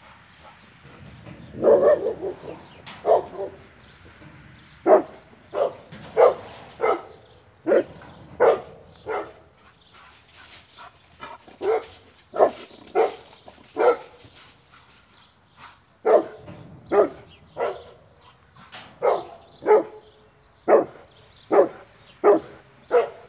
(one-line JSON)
{"title": "Poznan, Mateckigo road - fenced dog", "date": "2014-05-21 19:50:00", "description": "a dog sensing my presence from behind a steel sheet gate and a brick wall. jumping around, trying to find a way over the wall or under the gate, baying, panting with anger.", "latitude": "52.46", "longitude": "16.90", "altitude": "97", "timezone": "Europe/Warsaw"}